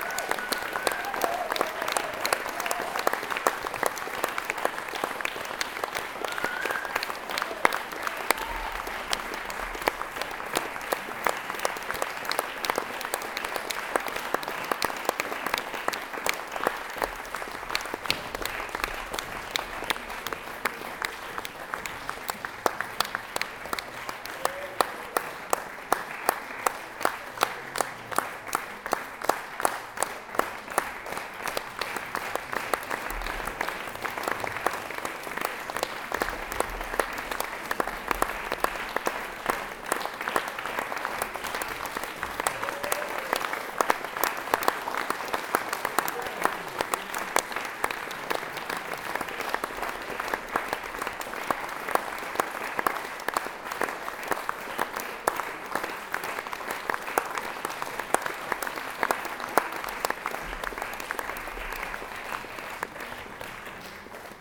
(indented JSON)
{
  "title": "bonn, frongasse, theaterimballsaal, bühnenmusik killer loop - bonn, frongasse, theaterimballsaal, schlussapplaus",
  "description": "soundmap nrw - social ambiences - sound in public spaces - in & outdoor nearfield recordings",
  "latitude": "50.73",
  "longitude": "7.07",
  "altitude": "68",
  "timezone": "GMT+1"
}